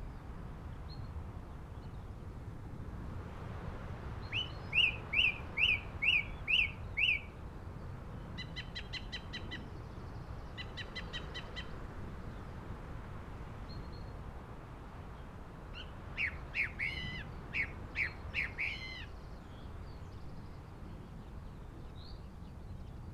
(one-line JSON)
{"title": "Green Ln, Malton, UK - song thrush song ...", "date": "2021-05-09 05:30:00", "description": "song thrush song ... pre-amped mini jack mics in a SASS to Olympus LS 11 ... bird calls ... song ... from ... yellowhammer ... chaffinch ... crow ... skylark ... linnet ... dunnock ... wren ... rain and wind ...", "latitude": "54.13", "longitude": "-0.55", "altitude": "85", "timezone": "Europe/London"}